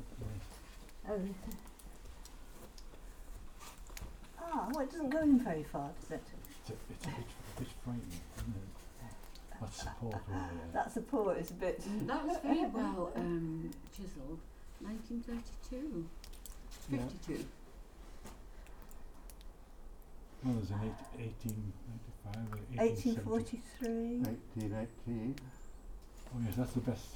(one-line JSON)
{"title": "N Hazelrigg, Chatton, Alnwick, UK - st cuthberts cave ...", "date": "2019-11-12 12:15:00", "description": "st cuthbert's cave ... an overhanging outcrop of sandstone rock ... supposedly st cuthbert's body was brought here by the monks of Lindisfarne ... set my mics up to record the soundscape and dripping water ... a group of walkers immediately appeared ... they do a good job of describing the cave and its graffiti ... lavalier mics clipped to bag ...", "latitude": "55.61", "longitude": "-1.91", "altitude": "166", "timezone": "Europe/London"}